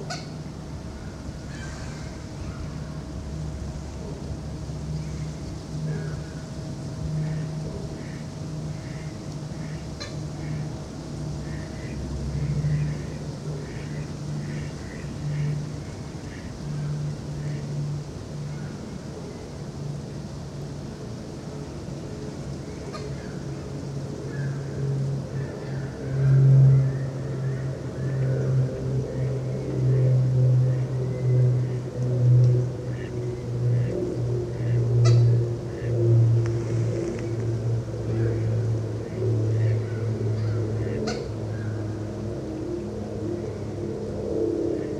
Boisbriand, QC, Canada - Centre Nature Boisbriand
The "Centre de la nature de Boisbriand" is a small park along the Milles-Iles river where you can relax in nature watching and listening to birds, squirrels.
In this recording you can ear some ducks, gulls, Canada goose, and a Cooper Hawk couple sorry for the plane at the end :)
Enjoy !
Recorder: Zoom H2N with a homemade stereo microphone.